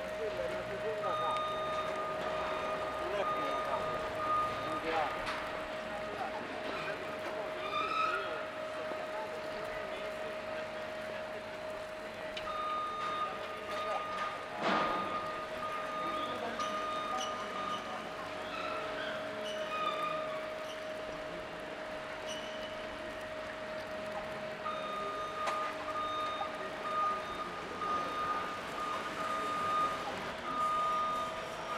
L'Aquila, Piazza Duomo - 2017-05-22 12-Piazza Duomo
L'Aquila AQ, Italy, 22 May 2017